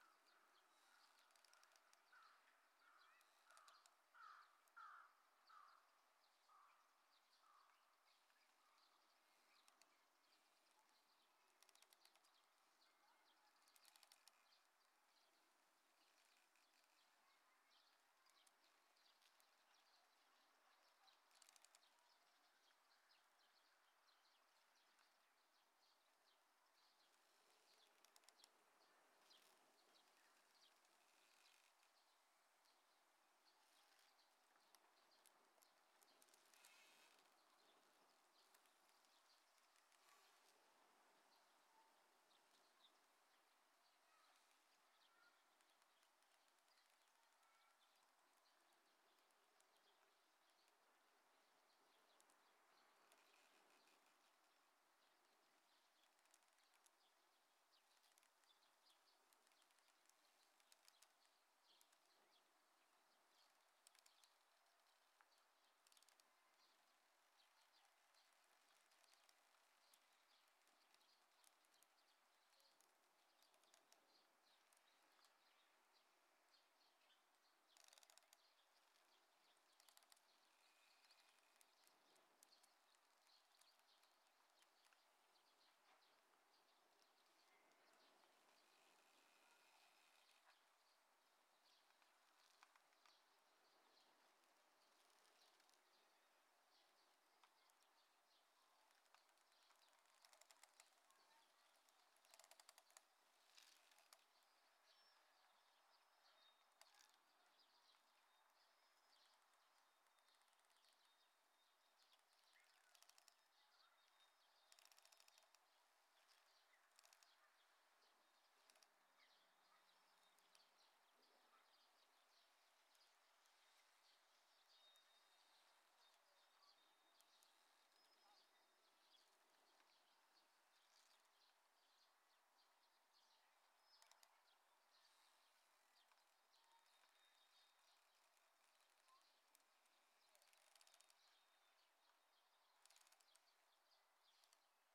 Anya, Wakamatsu Ward, Kitakyushu, Fukuoka, Japan - Tethered Squid Fishing Boats
Squid fishing boats tethered to a quiet dock.